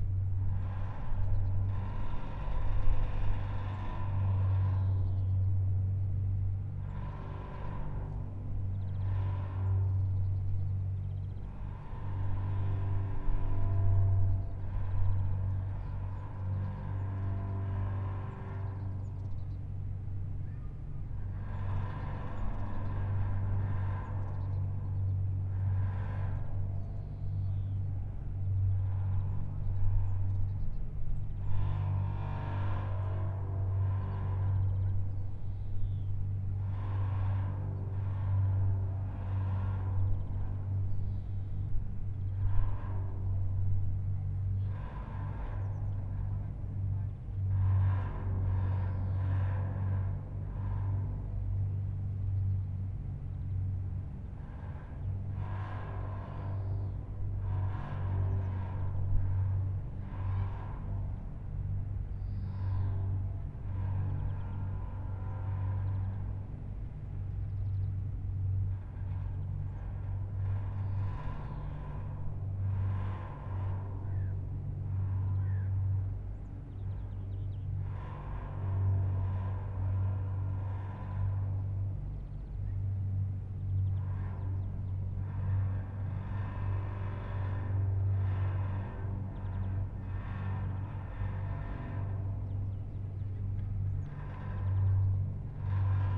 Kienbergpark, Berlin, Deutschland - ropeway post drone
For the IGA (international garden exhibition) 2017, a ropeway was built across the Wuhle river valley, stretching over a few hundred meters . While the ropeway is running, it creates vibrations and resonances in the pole beneath.
(SD702, SL502 ORTF)